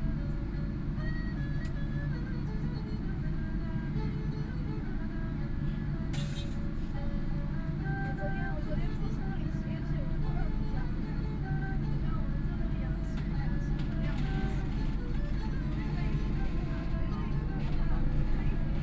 Yangpu District, Shanfhai - Line 8 (Shanghai Metro)
from Jiangpu Road station to Hongkou Football Stadium station, erhu, Binaural recording, Zoom H6+ Soundman OKM II
Shanghai, China, 3 December 2013